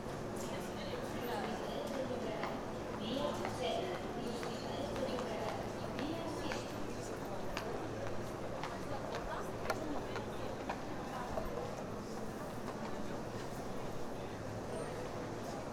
Sants Estació interior
Inside the hall of the train station. Lots of people uses this station for regional, national and international journeys everyday.
19 January 2011, ~14:00